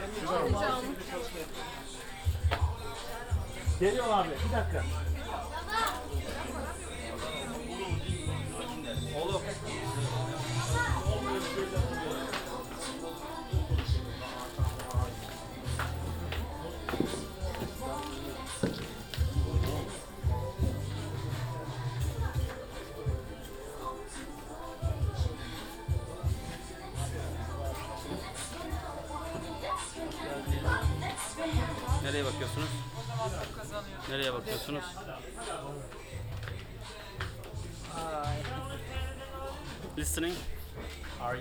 Istanbul, Istiklal - Walk on Istiklal Caddesi with a shoppin mall visit
22 August